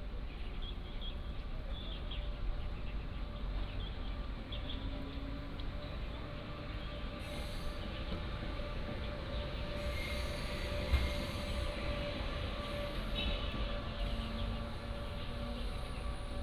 Dounan Station, Yunlin County - In the square
In the square in front of the station, Train traveling through, Bird calls